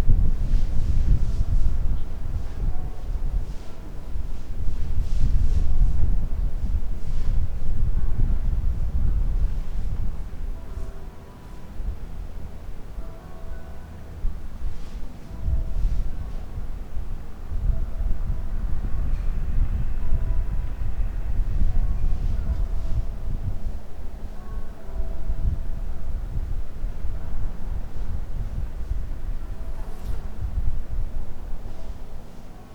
(binaural) church bells from different villages echoing over the mountains.